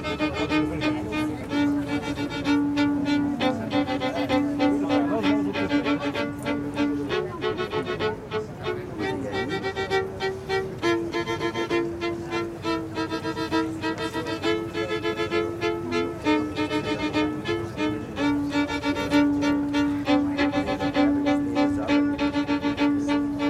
{
  "title": "Place de l'Albertine, Bruxelles, Belgique - A homeless man plays a piece of music on a violin",
  "date": "2022-05-25 14:00:00",
  "description": "A tree was planted 12 years ago to honor the homeless who died on the streets.\nEvery year the list of all those who died on the street is read here.\nA homeless man plays a piece of music on a violin.\nTech Note : Olympus LS5 internal microphones.",
  "latitude": "50.84",
  "longitude": "4.36",
  "altitude": "37",
  "timezone": "Europe/Brussels"
}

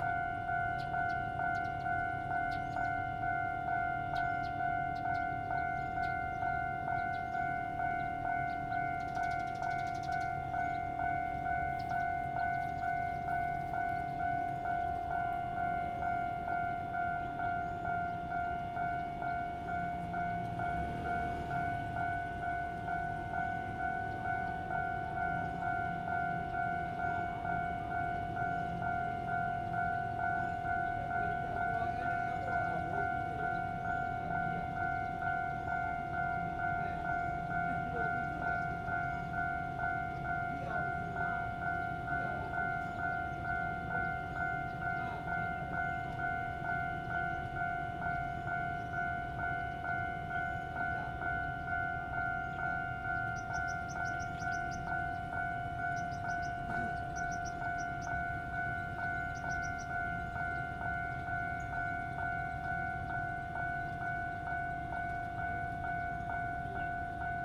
{
  "title": "大村鄉擺塘村, Dacun Township - in the railroad crossing",
  "date": "2017-04-06 14:31:00",
  "description": "in the railroad crossing, The train runs through\nZoom H2n MS+XY",
  "latitude": "23.99",
  "longitude": "120.56",
  "altitude": "23",
  "timezone": "Asia/Taipei"
}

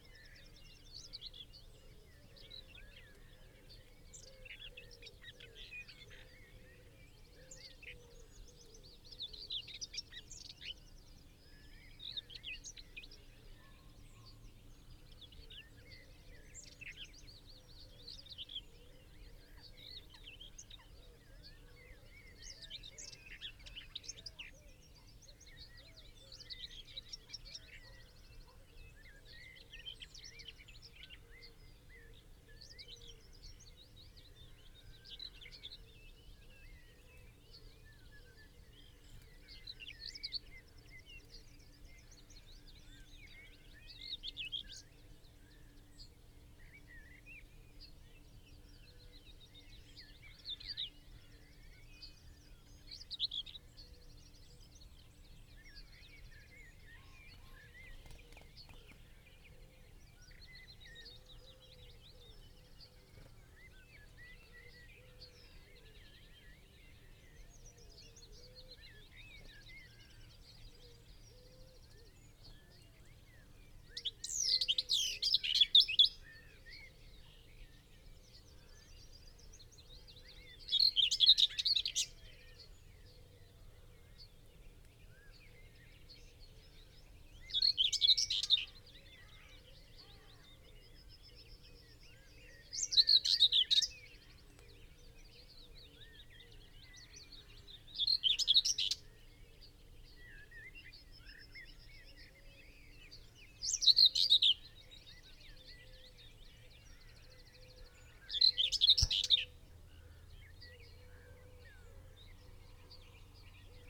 {
  "title": "Green Ln, Malton, UK - whitethroat singing down a hedgerow ...",
  "date": "2019-06-15 04:30:00",
  "description": "whitethroat singing down a hedgerow ... lavalier mics clipped to a bush ... bird sings from its song post ... moves away down the hedgerow and then returns numerous times ... bird call ... song from ... blackbird ... song thrush ... linnet ... willow warbler ... yellowhammer ... wren ... pheasant ... crow ... wood pigeon ... some background noise ...",
  "latitude": "54.12",
  "longitude": "-0.54",
  "altitude": "83",
  "timezone": "Europe/London"
}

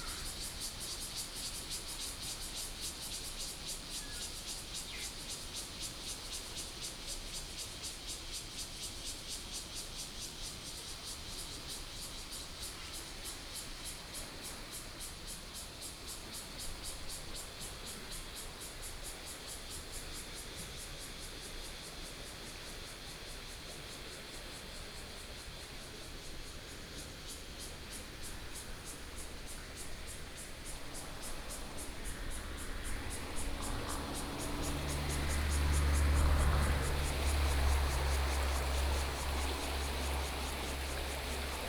{
  "title": "Minquan Rd., Guanshan Township - Cicadas and streams",
  "date": "2014-09-07 11:40:00",
  "description": "Cicadas and streams, Traffic Sound",
  "latitude": "23.05",
  "longitude": "121.15",
  "altitude": "259",
  "timezone": "Asia/Taipei"
}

